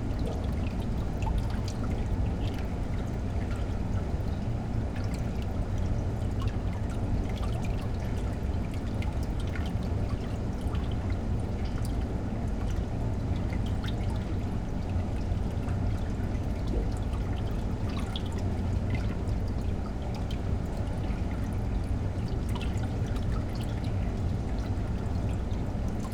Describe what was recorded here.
It's a beautiful old brick-built Victorian pumping station on the Kennet and Avon canal just outside Reading. It's roof went into disrepair a few years ago but a new one was put in place with stories of turning it into a canal-side cafe. The door was locked and double bolted, but that didn't last long..Now you can gain access, and this is one of the lovely soundscapes that greets your ears. Sony M10